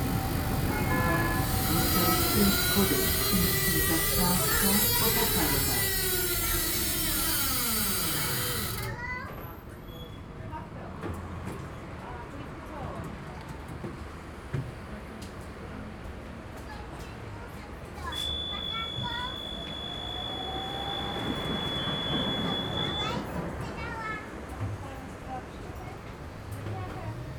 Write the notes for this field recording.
strange sounds in tram #6 while driving downhill